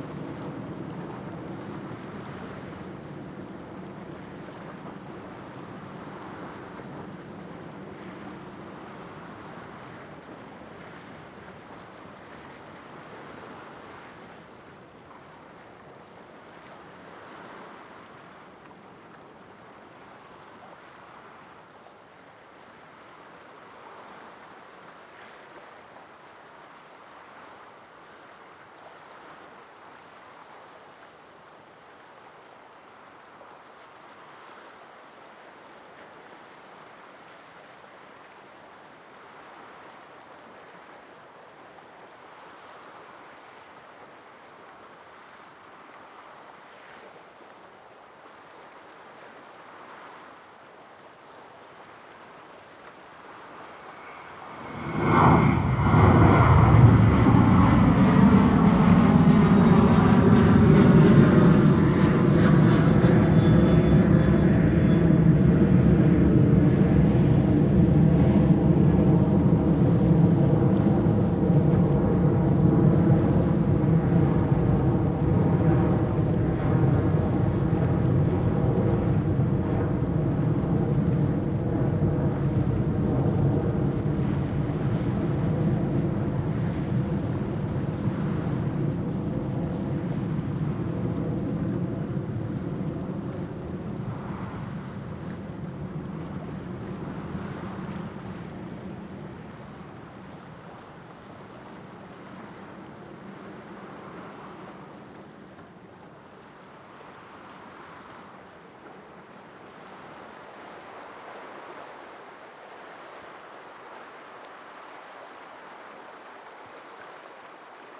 El Prat de Llobregat, Espagne - Llobregat - Barcelone - Espagne - Plage de la Roberta
Llobregat - Barcelone - Espagne
Plage de la Roberta
Ambiance de la plage, sur la digue, au bout de la piste de décollage de l'aéroport.
ZOOM F3 + AKG 451B
Barcelona, Catalunya, España